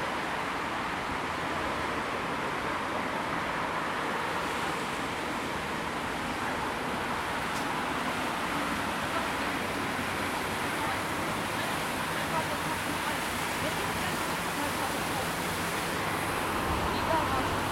{"title": "stuttgart, kulturmeile", "date": "2011-08-15 11:30:00", "description": "Soundwalk from one museum (HdG) to the other museum beneath (Staatsgalerie) and back.", "latitude": "48.78", "longitude": "9.19", "altitude": "247", "timezone": "Europe/Berlin"}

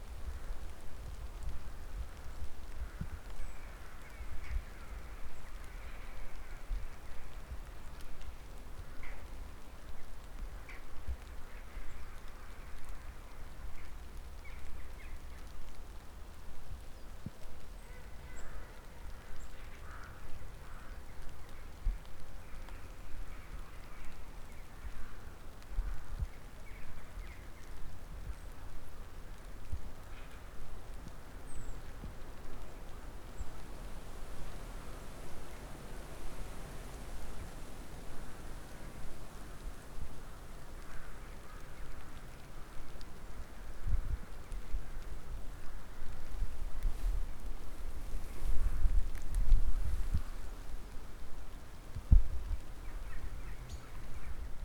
{"title": "Srem, small park near the Warta river - ambience in the museum backyard", "date": "2011-12-24 13:37:00", "latitude": "52.09", "longitude": "17.02", "altitude": "72", "timezone": "Europe/Warsaw"}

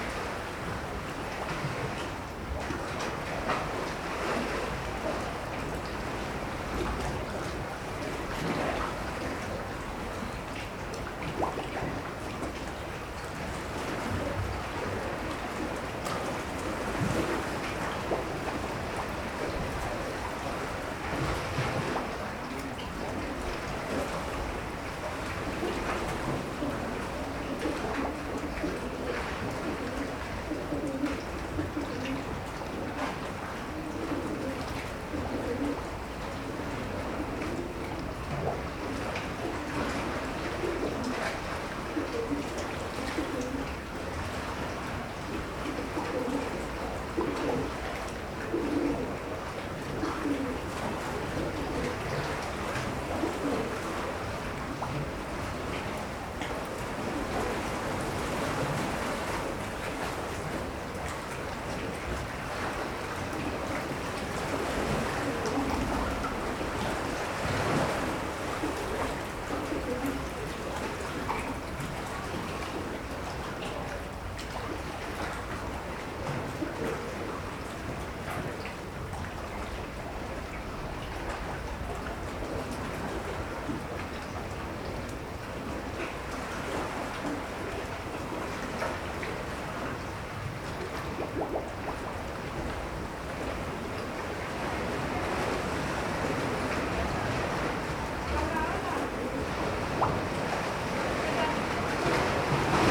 {"title": "Chrysopighi, Sifnos, Greece - Chrysopighi - under the bridge", "date": "2015-08-06 18:49:00", "description": "recorded under the bridge that joins the two sections of Chrysopighi monastery on Sifnos. a narrow gash in the rocks, creating a reverberant space. waves, pigeons. AT8022 / Tascam DR40", "latitude": "36.94", "longitude": "24.75", "altitude": "4", "timezone": "Europe/Athens"}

